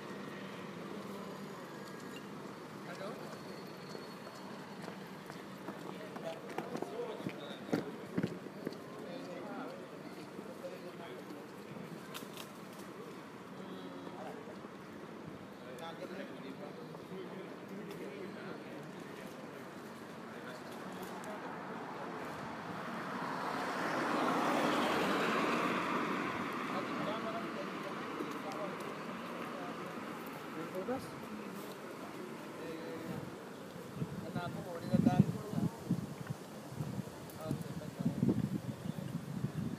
{
  "title": "Oxford, Oxfordshire, Reino Unido - Bonn Square in the Evening",
  "date": "2014-08-11 20:15:00",
  "latitude": "51.75",
  "longitude": "-1.26",
  "altitude": "73",
  "timezone": "Europe/London"
}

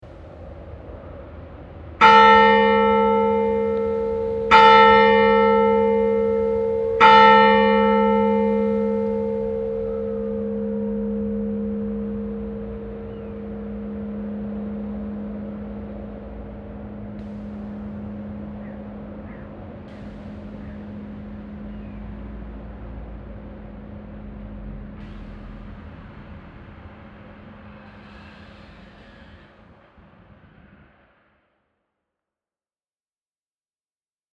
{
  "title": "Eselborn, Clervaux, Luxemburg - Eselborn, church, bells",
  "date": "2012-08-06 15:00:00",
  "description": "An der Dorfkirche. Der Klang der drei Uhr Glocke die in der Ruhe des Ortes ausfaded.\nAt the church of the village. The sound of the 3o clock bells fading out into the silent village atmosphere.",
  "latitude": "50.06",
  "longitude": "6.00",
  "altitude": "500",
  "timezone": "Europe/Luxembourg"
}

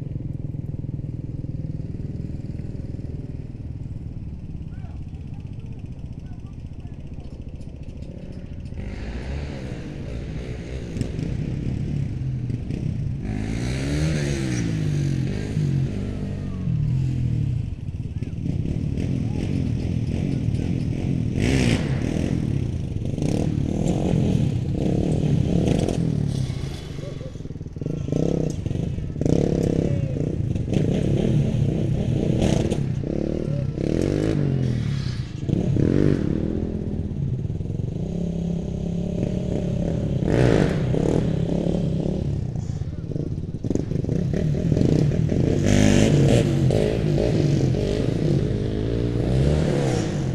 {
  "title": "Nickajack Park, Mableton, GA, USA - Baseball game & children playing",
  "date": "2020-12-29 17:25:00",
  "description": "A recording made around the perimeter of an athletic field. Children can be heard at a nearby playground, and sounds from a baseball game in the opposite field carry over into the recording. Two people rode through the connecting street on all-terrain quads, causing a large spike in the recording level. My microphone placement wasn't as exact as I thought it was and most of the activity is heard from the left side, but this resulted in the unintentional effect of hearing the expansive reverb/echo present in this area in the right channel.\n[Tascam Dr-100mkiii w/ Primo EM-272 onmi mics]",
  "latitude": "33.82",
  "longitude": "-84.51",
  "altitude": "235",
  "timezone": "America/New_York"
}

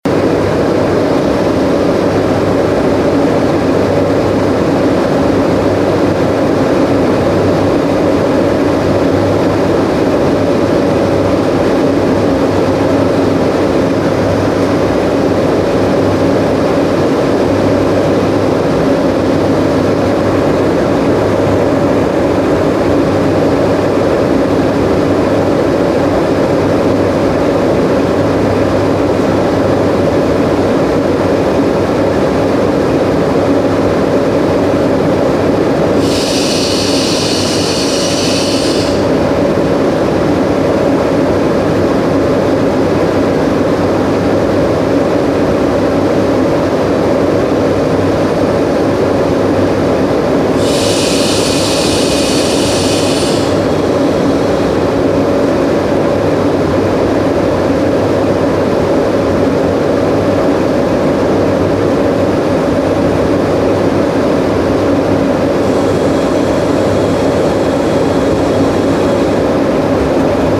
{
  "title": "stolzembourg, SEO, hydroelectric powerplant, generator",
  "date": "2011-09-18 14:55:00",
  "description": "Inside the under earth tunnel of the SEO hydroelectric powerplant named: Kaverne. The sound of a generator driven by the water power generated from the turbine that stands next to it.\nStolzemburg, SEO, Wasserkraftwerk, Generator\nIm unterirdischen Tunnel des SEO-Kraftwerks mit dem Namen: Kaverne. Das Geräusch von einem Generator, der durch die Wasserkraft angetrieben ist, die durch die Turbine neben ihm erzeugt wird.\nStolzembourg, SEO, usine hydroélectrique, générateur\nDans le tunnel souterrain de l’usine hydroélectrique SEO qui s’appelle : la caverne. Le bruit d’un générateur entraîné par la puissance de l’eau, produit par la turbine qui est placée juste à côté.",
  "latitude": "49.95",
  "longitude": "6.18",
  "altitude": "295",
  "timezone": "Europe/Luxembourg"
}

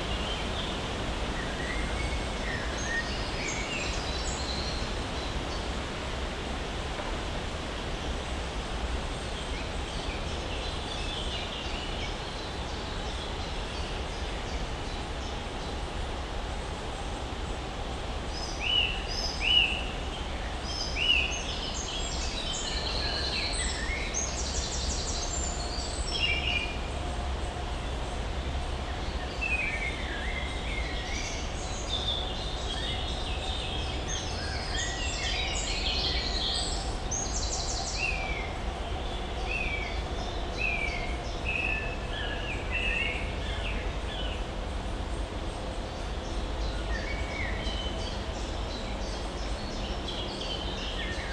{
  "title": "Unnamed Road, Troisdorf, Alemania - Forest",
  "date": "2021-06-21 14:00:00",
  "latitude": "50.77",
  "longitude": "7.08",
  "altitude": "52",
  "timezone": "Europe/Berlin"
}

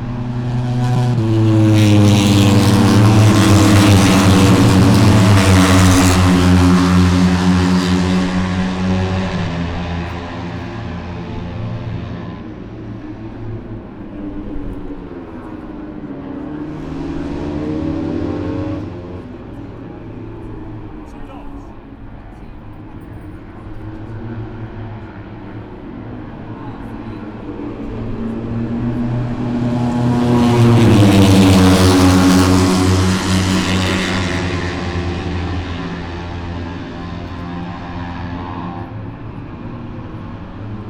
{
  "title": "Towcester, UK - british motorcycle grand prix 2022 ... moto three ...",
  "date": "2022-08-05 08:56:00",
  "description": "british motorcycle grand prix 2022 ... moto three free practice one ... dpa 4060s on t bar on tripod to zoom f6 ...",
  "latitude": "52.07",
  "longitude": "-1.01",
  "altitude": "157",
  "timezone": "Europe/London"
}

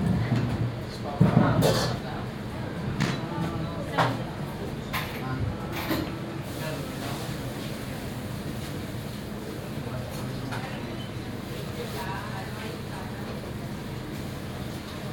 {
  "title": "Wok kitchen at Amsterdam, Holandia - (303) Wok kitchen",
  "date": "2017-09-17 16:28:00",
  "latitude": "52.37",
  "longitude": "4.90",
  "altitude": "10",
  "timezone": "Europe/Amsterdam"
}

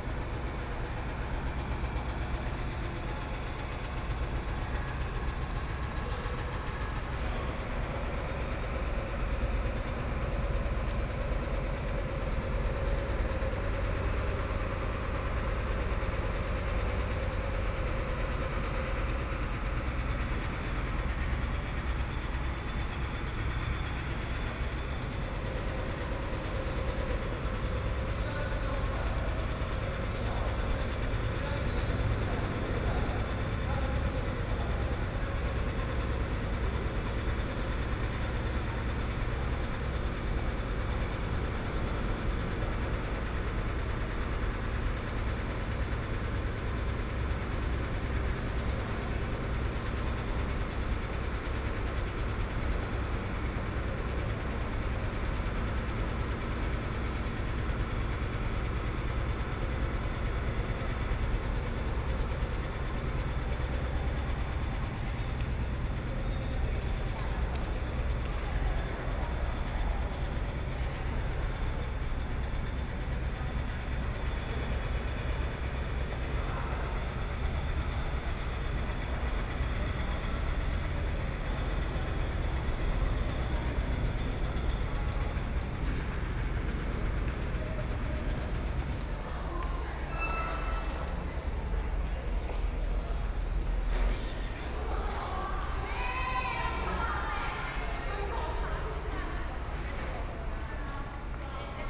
{
  "title": "Zhongxiao W. Rd., Zhongzheng Dist. - Construction noise",
  "date": "2014-01-21 14:13:00",
  "description": "Construction noise, In the lobby of the building, Binaural recordings, Zoom H4n+ Soundman OKM II",
  "latitude": "25.05",
  "longitude": "121.52",
  "timezone": "Asia/Taipei"
}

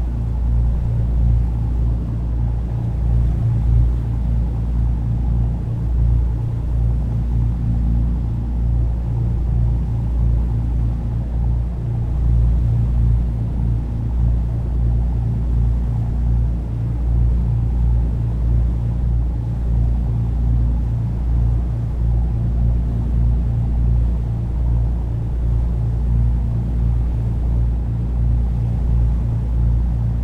{
  "title": "Crewe St, Seahouses, UK - Grey Seal Cruise ...",
  "date": "2018-11-06 13:20:00",
  "description": "Grey Seal cruise ... entering Sea Houses harbour ... background noise ... lavalier mics clipped to baseball cap ...",
  "latitude": "55.58",
  "longitude": "-1.65",
  "timezone": "GMT+1"
}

